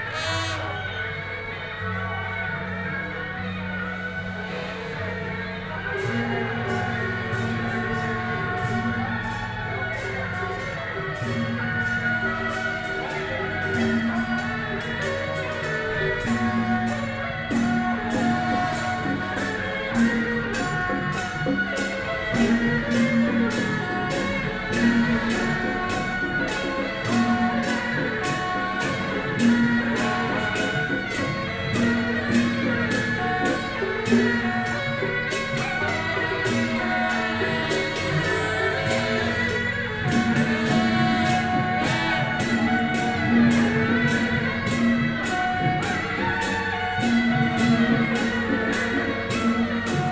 Sec., Guiyang St., Wanhua Dist. - Traditional temple festivals